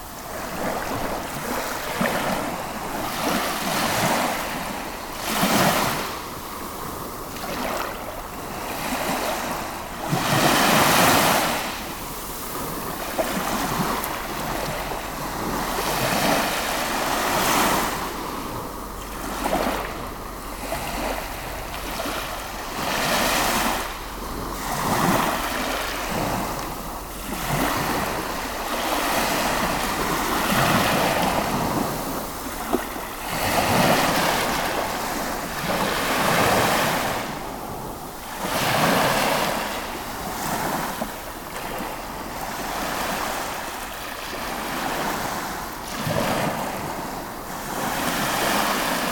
stodby, waves of the east sea
constant waves on the stoney berach shore at stodby, lolland, denmark - a mild wind breeze on a fresh summer morning
international sound scapes - social ambiences and topographic field recordings